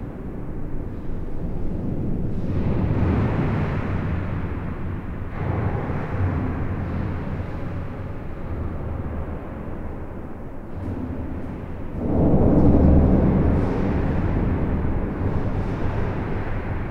Namur, Belgique - The viaduct
This viaduct is one of the more important road equipment in all Belgium. It's an enormous metallic viaduct on an highway crossing the Mass / Meuse river. All internal structure is hollowed.
This recording is made inside the box girder bridge, which is here in steel and not concrete. Trucks make enormous explosions, smashing joint with high velocity and high burden. Infrasounds are gigantic and make effects on the human body, it's sometimes difficult to sustain.
It was very hard to record as everything terribly vibrate and drowned into infrasound strong waves, but an accomplishment. Flavien Gillié adviced me about this kind of recording, in a smaller structure, and thanks to him. It was a dream to record this mythical box girder.
19 April, 07:45